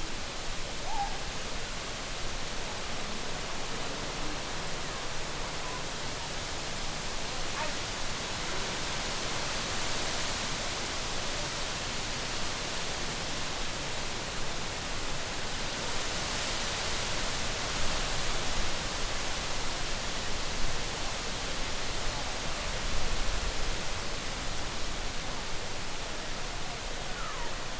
Ponte Spin' a Cavallu, Sartène, France - Ponte Spin
wind in trees, dog, people, frog, road noise
Captation : ZOOM H6